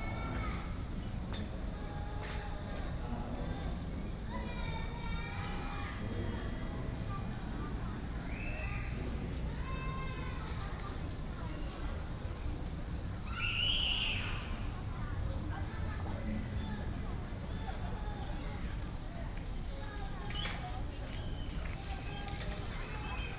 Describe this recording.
In the Plaza, Holiday Many tourists, Very hot weather